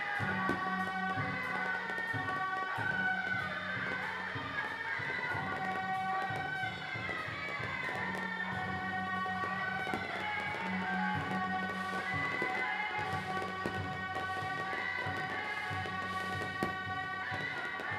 大仁街, Tamsui District, New Taipei City - Traditional festival
Traditional festival parade, Firecrackers, Fireworks sound
Zoom H2n MS+XY